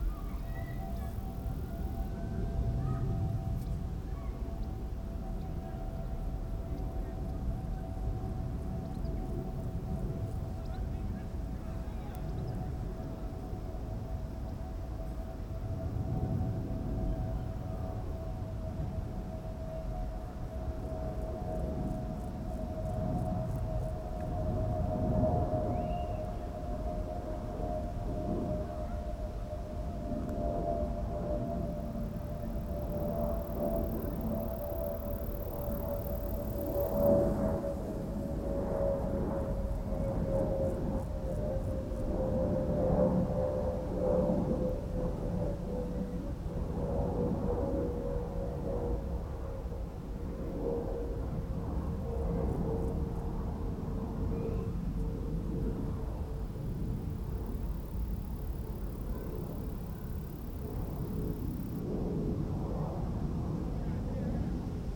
{"date": "2016-07-26 16:57:00", "description": "Air traffic noise on a windy summers afternoon in Brockwell Park in Brixton, London.", "latitude": "51.45", "longitude": "-0.11", "altitude": "40", "timezone": "Europe/London"}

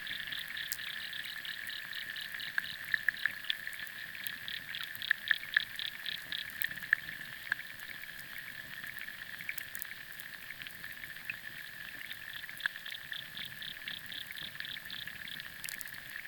{"title": "Luknai, Lithuania, underwater and electricity", "date": "2018-06-30 16:25:00", "description": "exploring sound territories beyond common human hearing. underwater sounds via hydrophones and vlf/air electricity via diy electromagnetic antenna Priezor", "latitude": "55.56", "longitude": "25.57", "altitude": "112", "timezone": "Europe/Vilnius"}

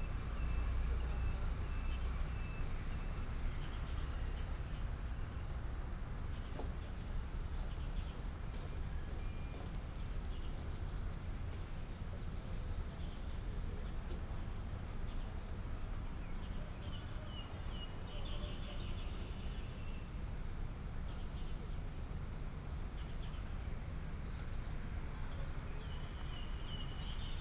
{"title": "士校大池, Zhongli Dist., Taoyuan City - Big pool", "date": "2017-11-29 08:46:00", "description": "in the Big pool, Traffic sound, Birds singing, Binaural recordings, Sony PCM D100+ Soundman OKM II", "latitude": "24.94", "longitude": "121.26", "altitude": "163", "timezone": "Asia/Taipei"}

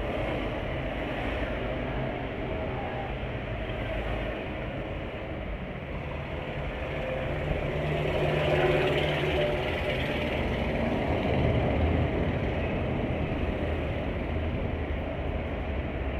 {"title": "Guandu Bridge, New Taipei City - Bridge", "date": "2012-07-06 17:18:00", "description": "The Bridge\nZoom H4n+Contact Mic", "latitude": "25.13", "longitude": "121.46", "timezone": "Asia/Taipei"}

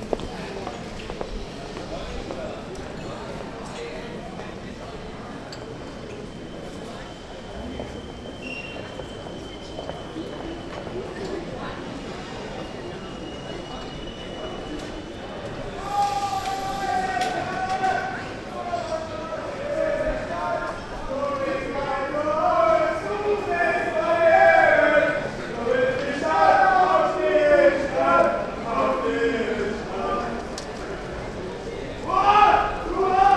{"title": "mainz: hbf - the city, the country & me: main station", "date": "2010-10-16 18:35:00", "description": "a walk through the station\nthe city, the country & me: october 16, 2010", "latitude": "50.00", "longitude": "8.26", "altitude": "94", "timezone": "Europe/Berlin"}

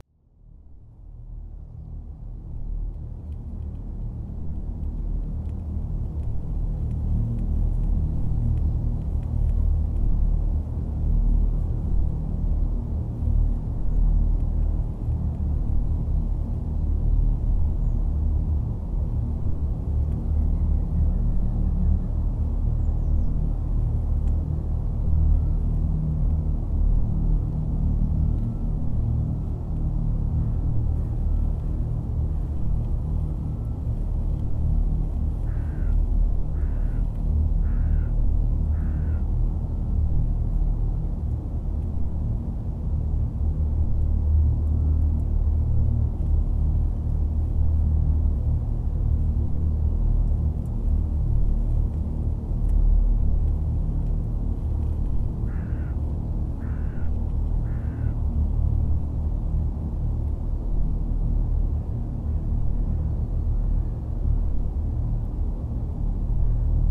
{"title": "Newton St. Loe, Bath, UK - Newton Park: Plastic Pipe Drone.", "date": "2016-03-21 08:00:00", "description": "Recorded at Newton Park using a Zoom H4 & its built in microphones. The recording is the result of placing the H4's mic capsules just inside the opening of a discarded piece of industrial grade plastic pipe. The pipe was left on grass verge & was approx 4m in length and approx 15cm in diameter. The pipe has since been removed.", "latitude": "51.38", "longitude": "-2.43", "altitude": "65", "timezone": "Europe/London"}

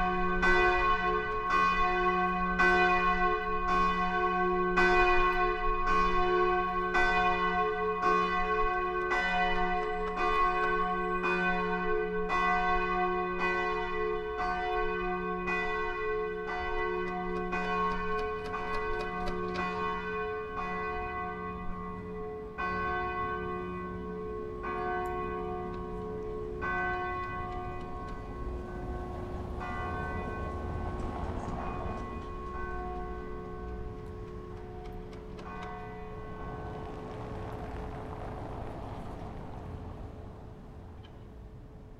{"title": "leipzig, nathanaelkirche, 12 uhr", "date": "2011-09-01 12:00:00", "description": "1. september 2011, 12 uhr mittags läutet die nathanaelkirche.", "latitude": "51.34", "longitude": "12.33", "altitude": "110", "timezone": "Europe/Berlin"}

